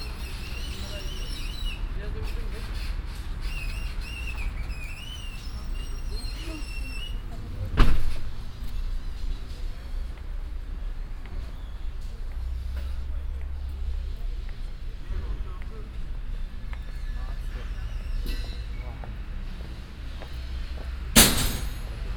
cologne, muelheim, berliner strasse, markt abbau
abbau des wochenmarkts am frühen nachmittag - lkw beladen, stände einräumen und abbauen, türkische kommunikationen
soundmap nrw: social ambiences/ listen to the people - in & outdoor nearfield recordings
27 August, ~21:00